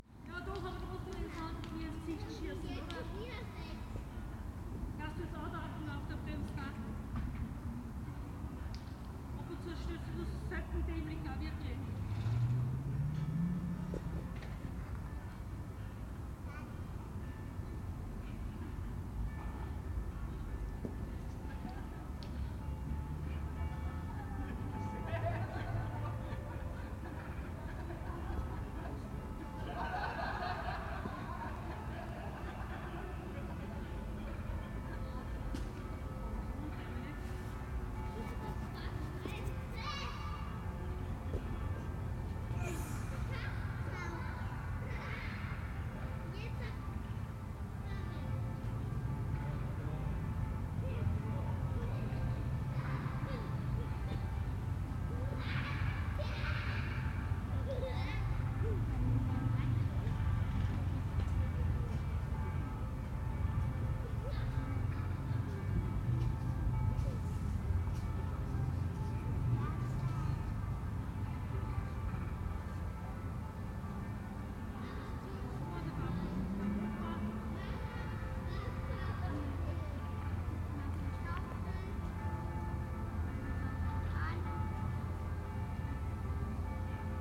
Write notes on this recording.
This lovely green courtyard, with shady trees is typical of Nazi era (late 1930s) housing in this area. The surrounding buildings protect the interior from outside sounds, especially the autobahn roar, creating a quiet and peaceful atmosphere where children play and long lines are provided for hanging out washing. Unusually this one has been left more or less intact with no space yet taken for parking cars. Bells and voices reverberate gently when they occur. Crow calls may echo two or three times.